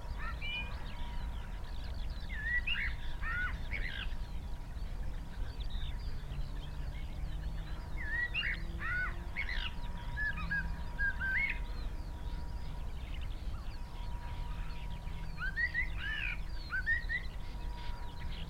Green Ln, Malton, UK - song thrush soundscape ...
Song thrush soundscape ... XLR mics in a SASS to Zoom H5 … starts with blackbird … song thrush commences at four minutes … ish … crows at 27 mins … bird call … song … tawny owl … wood pigeon … skylark … pheasant … red-legged partridge … blackbird … robin … crow … wren … dunnock … some background noise ...
England, UK, 17 March 2020, 06:25